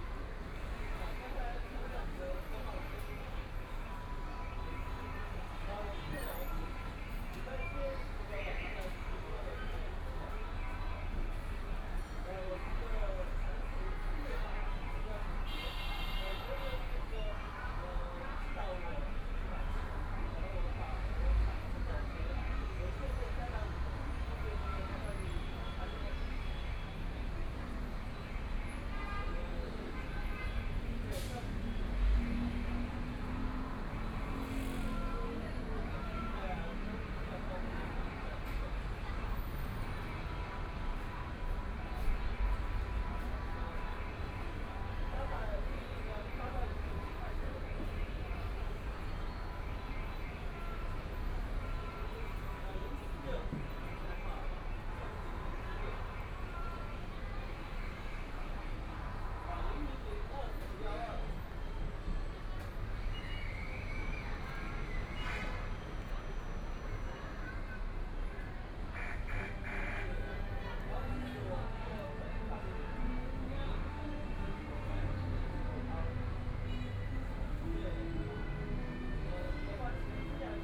Lu Xun Park, Hongkou District - Noisy sound Recreation Area
Sitting in the square outside cafe, Loud sound inside the park play area, Binaural recording, Zoom H6+ Soundman OKM II
23 November 2013, 12:41